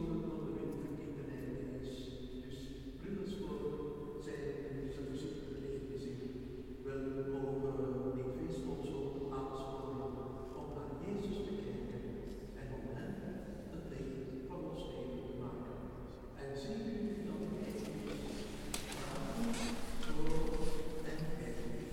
Sint-Janskerk, Mechelen, België - Lichtmis
[Zoom H4n Pro] End of the Lichtmis ceremony in Sint-Janskerk, priest saying goodbye to the parish
Mechelen, Belgium, 2 February 2019